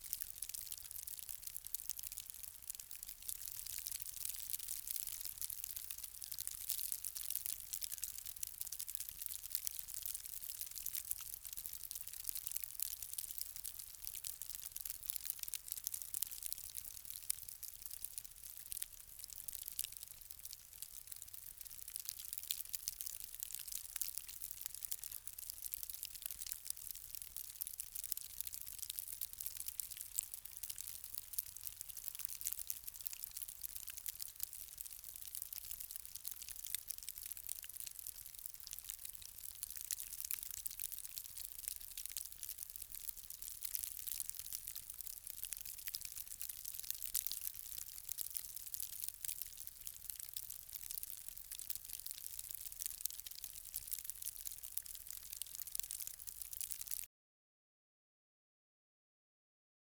Avenue Casgrain, Montréal, Canada - WEATHER HAIL Fall on Dry Leaves, Subtle, 0.1m
Montréal, CANADA
Avenue Casgrain
REC: DPA 4060 x2 - AB